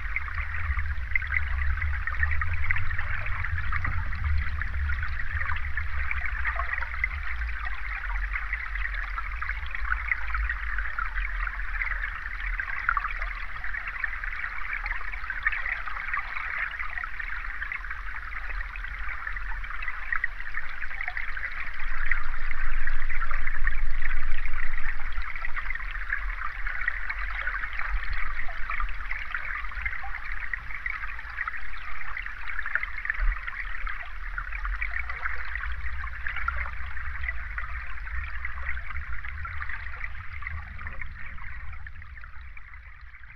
Vyžuonos, Lithuania, flooded river hydrophone
hydro in the flooded river